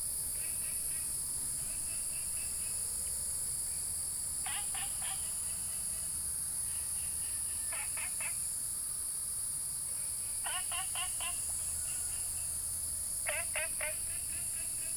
青蛙ㄚ 婆的家, Taomi Ln., Puli Township - in the bush
Frogs chirping, Insects called, Small ecological pool, Birds singing
Puli Township, 桃米巷11-3號, 12 August 2015, ~05:00